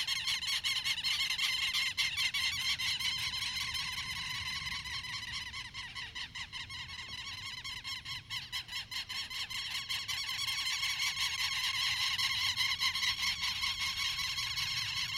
Santuario, Antioquia, Colombia - Santuario Soundscape morning
Field recording capture on a rural area in Santuario, Antioquia, Colombia.
The recording was made at 6:30 am, cloudy Sunday's morning.
Recorded with the inner microphones of the Zoom H2n placed at ground's level.
10 September